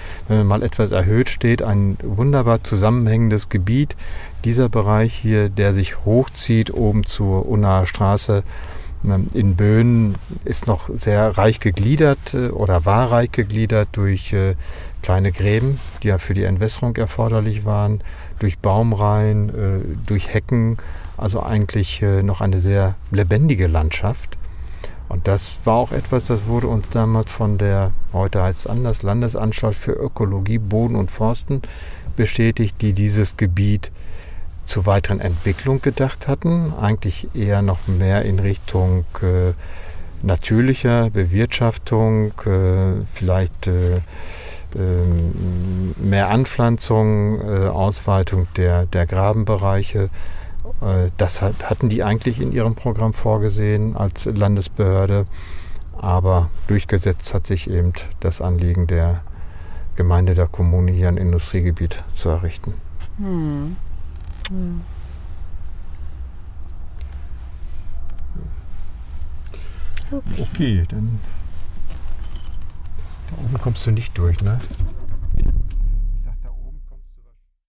Weetfeld, Hamm, Germany - Ersatz-Aue entlang der Autobahn...
Looking at the Wetlands along the Motorway…
“Citizen Association Against the Destruction of the Weetfeld Environment”
(Bürgergemeinschaft gegen die Zerstörung der Weetfelder Landschaft)